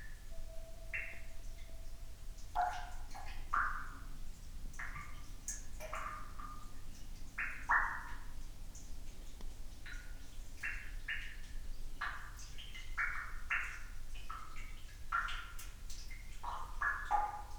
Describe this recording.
village Netzow/ Uckermark at night, water dripping in an effluent, distant dog barking, a plane, (Sony PCM D50, Primo EM172)